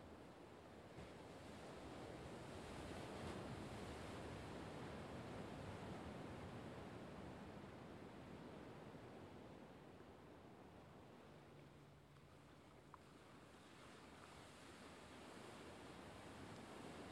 Santa Barbara, CA 93106美国 - The sound of the sea waves
I recorded the sound of the sea waves during afternoon. There was no high winds during that time. I used the Tascam DR-40X to record the sound.